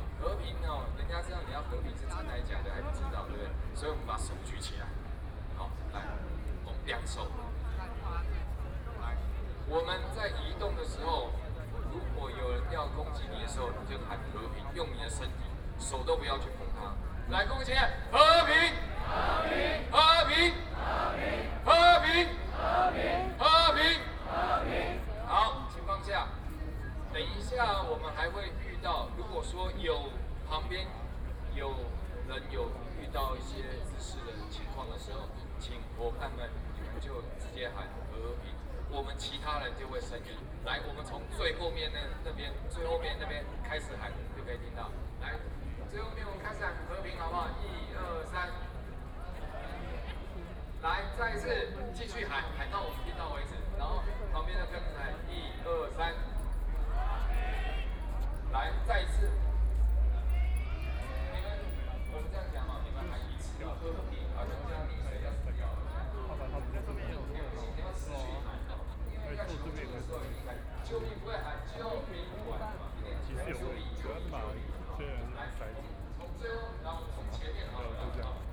Walking through the site in protest, People and students occupied the Legislature
Binaural recordings
Zhongzheng District, Taipei City, Taiwan, 20 March